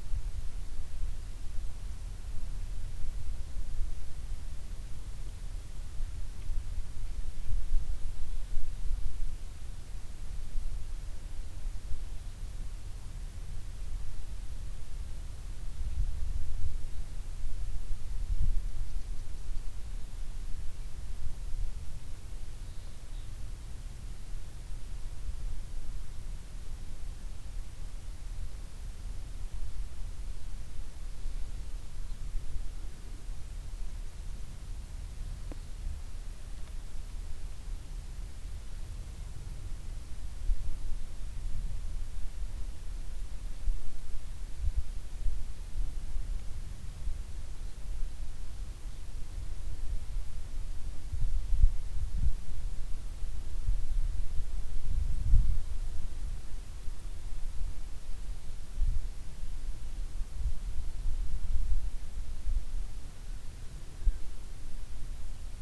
stodby, inside cornfield
inside a cornfield - the silence of the surrounding - some wind moves in the field, birds passing by// notice: no car motor sounds
international sound scapes - social ambiences and topographic field recordings